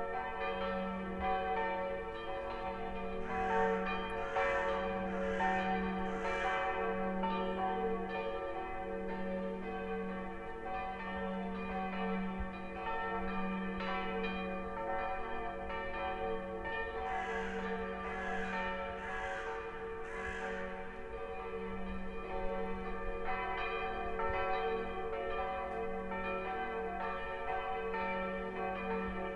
Vereinsgasse, Wien, Австрия - Bell
Bell Sound recorded on a portable recorder Zoom h4n